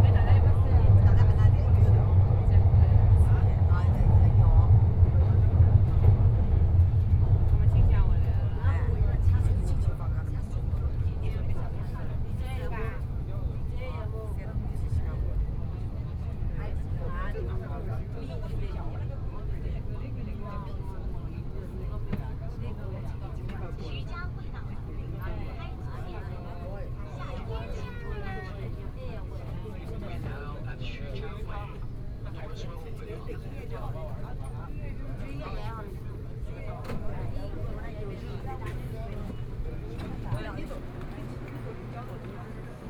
from Yishan Road Station to Xujiahui station, Messages broadcast station, walking in the Station, Binaural recording, Zoom H6+ Soundman OKM II